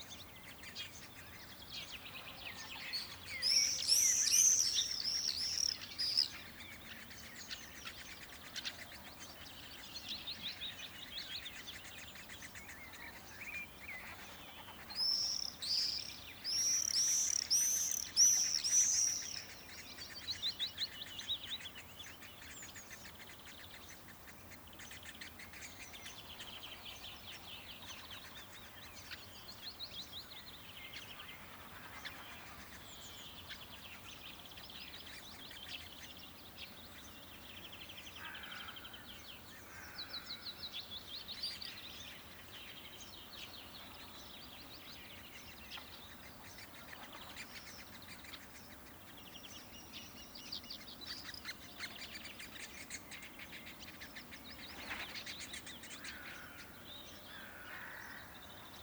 larnichtsberg, swallows, crows and insects
On a mellow windy summer morning near a forest. Swallows crossing a wheat field, some crows on a tree and insect in the meadow.
Larnichtsberg, Schwalben, Krähen und Insekten
An einem milden windigen Sommermorgen in der Nähe eines Waldes. Schwalben überqueren ein Weizenfeld, einige Krähen auf einem Baum und Insekten in der Wiese.
Larnichtsberg, hirondelles, corbeaux et insectes
Un doux et venteux matin d’été aux abords d’une forêt. Des hirondelles passent au dessus d’un champ de blé, des corbeaux sont assis sur un arbre et des insectes volent dans la prairie.